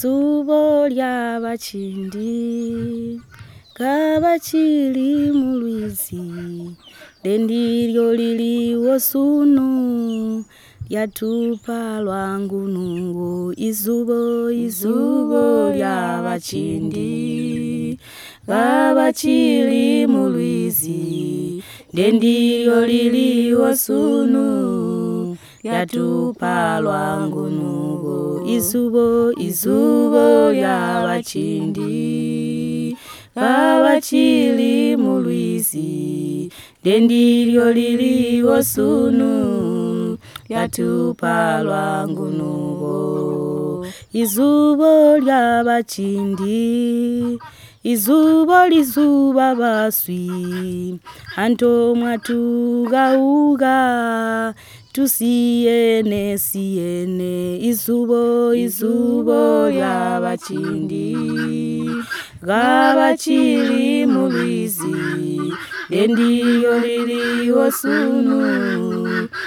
After a long interview recording, Lucia Munenge and Virginia Mwembe are singing a song together which tells the story, vision and achievements of “Zubo”, from the traditional fishing-baskets of the BaTonga women to the formation of Zubo Trust as an organisation whose vision is based on the same principle of women working together in teams to support themselves, their families and the community at large..
a recording by Lucia Munenge, Zubo's CBF at Sikalenge; from the radio project "Women documenting women stories" with Zubo Trust, a women’s organization in Binga Zimbabwe bringing women together for self-empowerment.